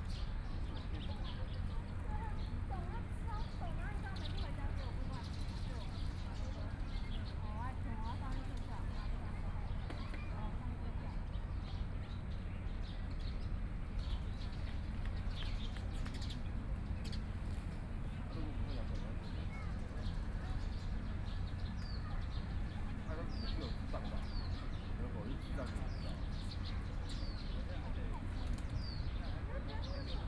中正紀念公園, Taipei City - in the Park
in the Park, birds sound
Binaural recordings, Sony PCM D100 + Soundman OKM II
Xìnyì Road, 13號3樓, 30 March 2014, ~16:00